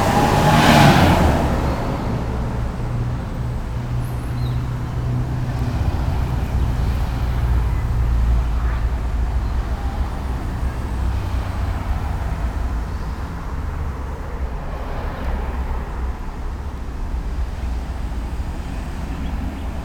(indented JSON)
{
  "title": "Adrianópolis, Manaus - Amazonas, Brésil - Rua Teresina at down",
  "date": "2012-07-18 18:02:00",
  "description": "In one of the few streets still arborized of Manaus, some birds maintain a pastoral soundscape punctuated by the steady stream of cars. Some children home from school.",
  "latitude": "-3.11",
  "longitude": "-60.01",
  "altitude": "75",
  "timezone": "America/Manaus"
}